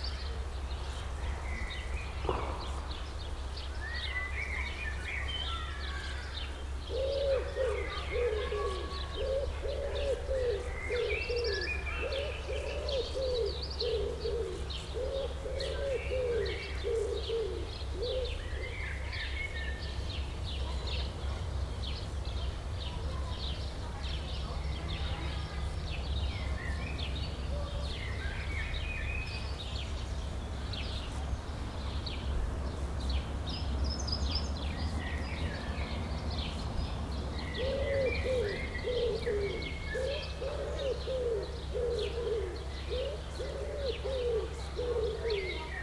Kirchmöser Ost - village ambience
Kirchmöser, quiet village ambience heard in a garden, kids playing in a distance
(Sony PCM D50, Primo EM272)